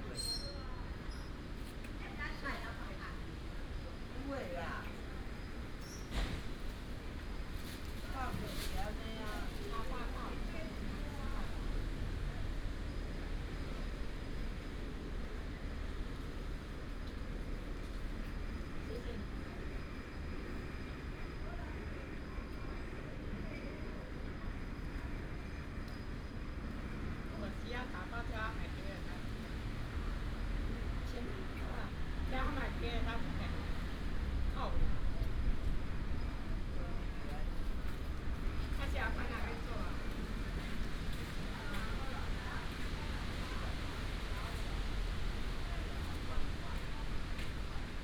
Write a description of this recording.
Walking in the Park, wind and Leaves, Dog, Binaural recordings, Sony PCM D100+ Soundman OKM II